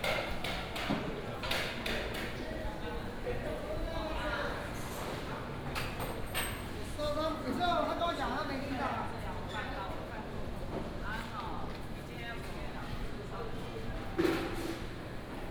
大展市場, Wugu Dist., New Taipei City - Traditional market
Preparing for market operation, Traditional market
New Taipei City, Wugu District, 水碓路7-9號, 6 May, ~06:00